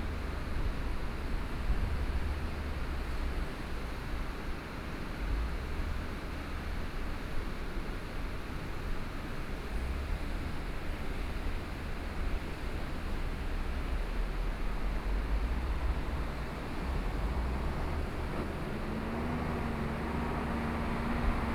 {
  "title": "Sec., Yuanshan Rd., Yuanshan Township - At the roadside",
  "date": "2014-07-25 14:14:00",
  "description": "Stream after Typhoon, Traffic Sound, At the roadside\nSony PCM D50+ Soundman OKM II",
  "latitude": "24.70",
  "longitude": "121.65",
  "altitude": "85",
  "timezone": "Asia/Taipei"
}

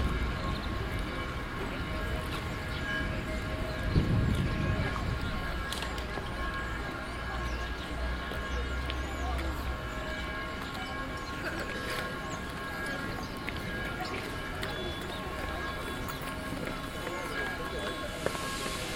{
  "title": "Parco Del Colle Oppio, Viale della Domus Aurea, Rom, Italien - domus aurea",
  "date": "2013-11-03 11:48:00",
  "description": "Bells, birds, preparation of a distance event\n(olympus ls5, soundman okmII classic)",
  "latitude": "41.89",
  "longitude": "12.50",
  "altitude": "43",
  "timezone": "Europe/Rome"
}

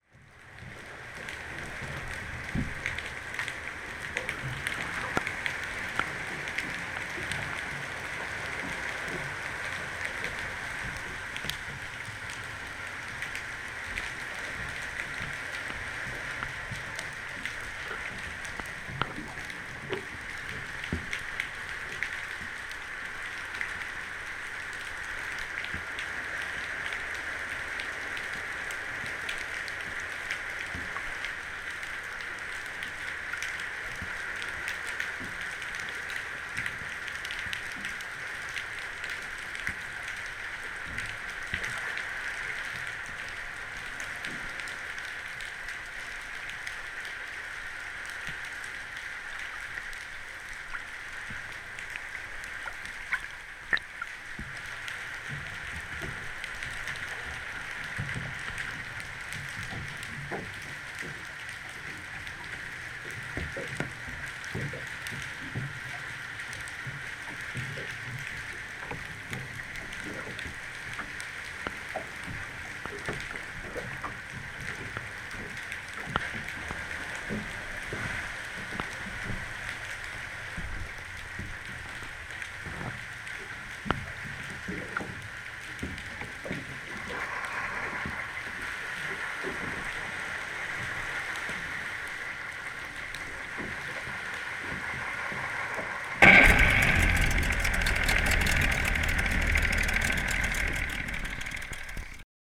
{
  "title": "Valparaiso harbor, Chile - hydrophone recording near cargo ship",
  "date": "2013-12-01 13:06:00",
  "description": "getting close to a ship to record the snapping shrimp",
  "latitude": "-33.04",
  "longitude": "-71.62",
  "altitude": "11",
  "timezone": "America/Santiago"
}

